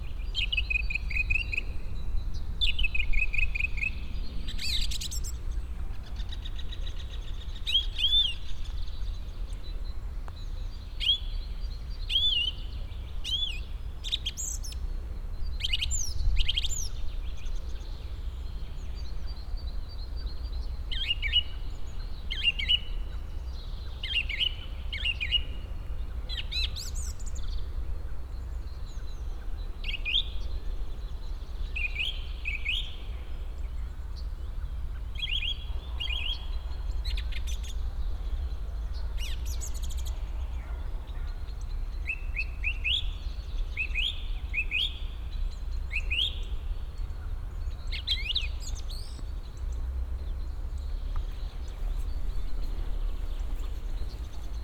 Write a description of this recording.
a very talkative bird sitting on one of the pine trees displaying its wide range of calls.